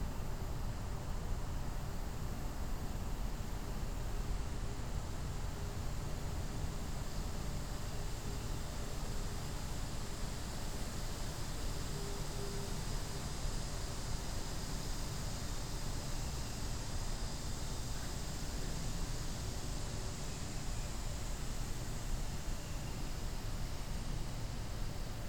City Greenway
11:40am local time on a pedestrian and bicycle greenway inside the city limits, wld, world listening day
18 July 2010, ~12:00